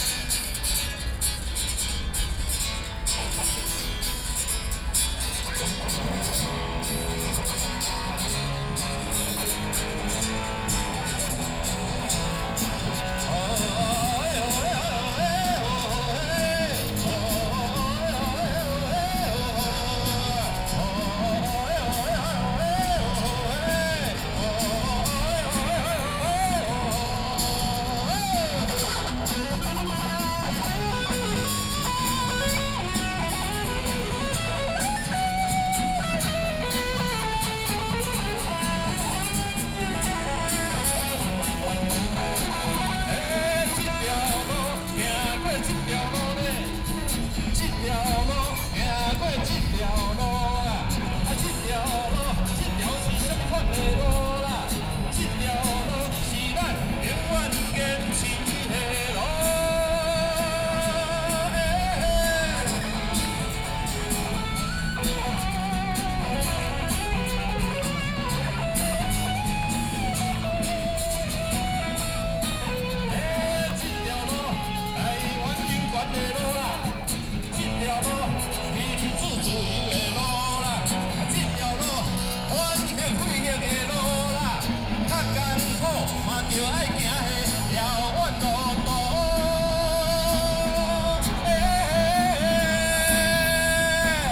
Opposed to nuclear power plant construction, Sony PCM D50 + Soundman OKM II